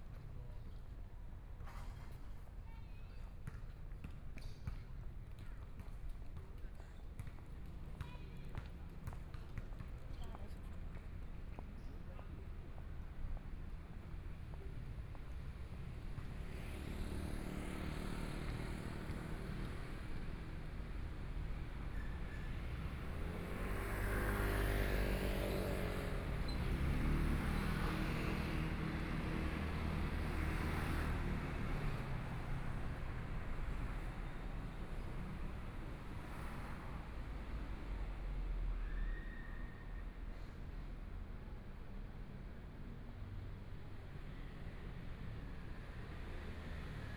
中山區大直里, Taipei City - Walking across the different streets
Walking across the different streets, Traffic Sound, Sunny mild weather
Please turn up the volume
Binaural recordings, Zoom H4n+ Soundman OKM II
Zhongshan District, Taipei City, Taiwan, 16 February